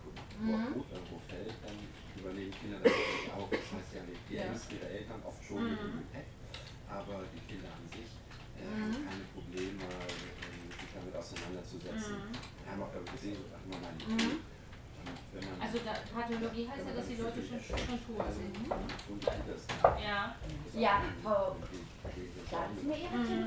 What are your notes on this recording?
The sound captures the lively atmosphere at the WDR 5 hotline just next to the broadcasting studio (on air with WDR 5 Tagesgespräch) at the Funkhaus Cologne